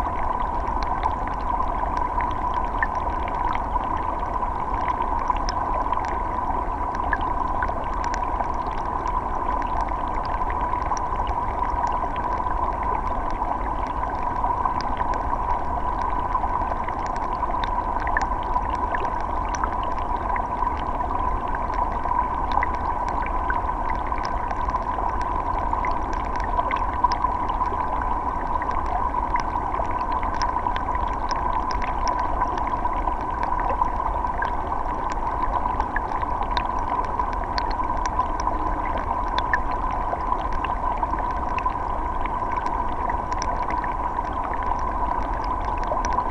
{"title": "Garneliai, Lithuania, beaver dam underwater", "date": "2021-11-26 15:40:00", "description": "Underwater microphones right before the beaver dam", "latitude": "55.53", "longitude": "25.66", "altitude": "128", "timezone": "Europe/Vilnius"}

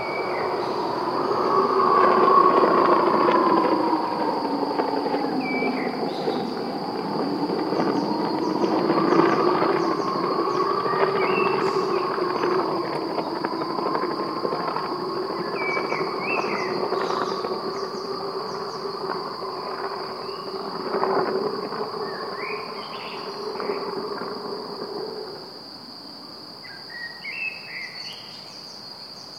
{"title": "Bürgerseesträßle, Kirchheim unter Teck, Deutschland - winch launch - Windenstart", "date": "2021-05-23 17:00:00", "description": "winch launch; glider airfield 'Hahnweide'\nSony PCM-D50; rec level 5; 120°", "latitude": "48.63", "longitude": "9.43", "altitude": "344", "timezone": "Europe/Berlin"}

